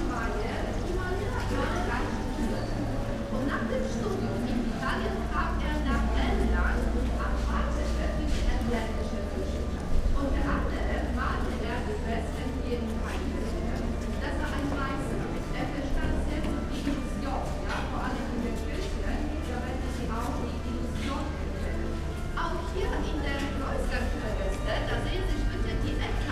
Święta Lipka, Poland, church yard
people coming out of church after the concert
12 August 2014, 11:00